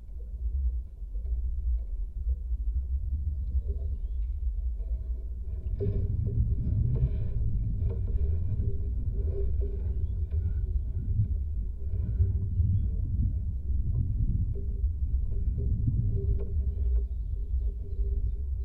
Lake Bebrusai, Lithuania, abandoned pontoon
Stalking through empty resort I found rusty, half broken pontoon bridge. contact microphones and geophone on metallic parts
Molėtų rajono savivaldybė, Utenos apskritis, Lietuva, 3 May 2020, ~17:00